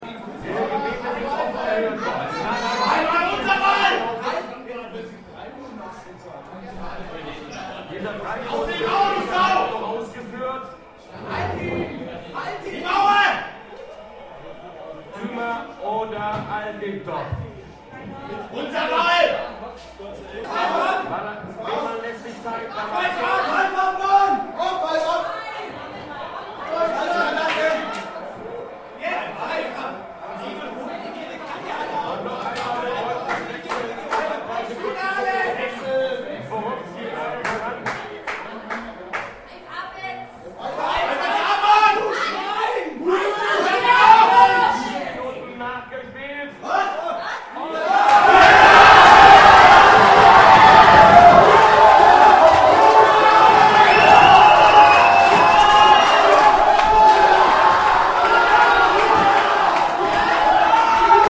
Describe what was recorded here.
Fans @ Zabo Eintracht. Last minute of the EM semifinal Germany vs. Turkey.